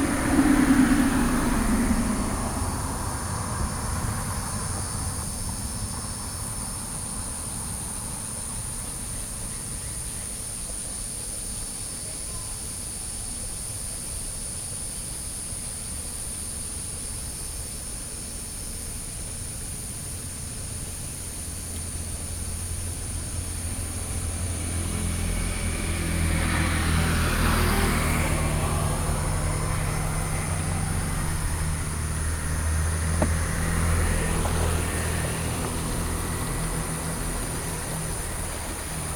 北港溪, Xizhi Dist., New Taipei City - Stream
Stream, Cicada sounds, Traffic Sound
Sony PCM D50(soundmap 20120716-28,29 )
New Taipei City, Taiwan, July 2012